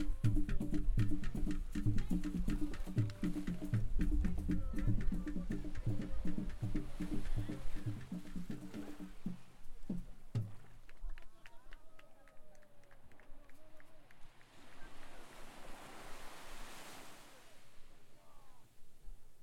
Nungwi beach is, by Zanzibar standards, still a relatively public beach and a good mix of crowds come here to look at the sunset. White sands, crystalline blue water, and of course, musicians everywhere. This day a boat was coming back from an afternoon excursion, probably, and there were some 4 or 5 drummers on board. As the boat got anchored they looked about ready to stop jamming, but kept on going, almost stopping again, and continuing. It was beautiful to watch and no-one on the beach wanted them to stop either...